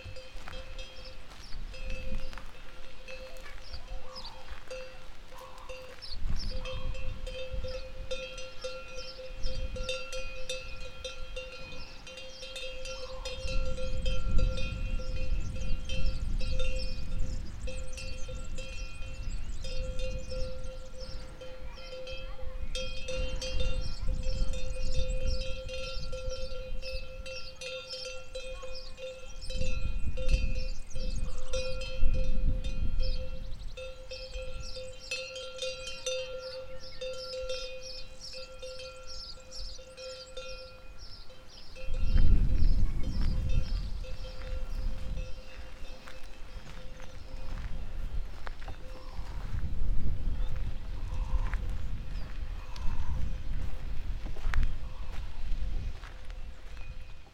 Cerezales del Condado, León, España - Taller MT bueyes